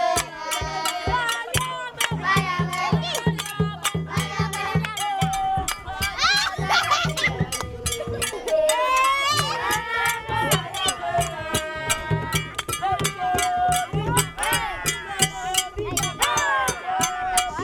Agblor Link, Keta, Ghana - Childeren in Keta making fun and music part 2
Childeren in Keta making fun and music part 2 - 12'19
15 October 2004, 19:20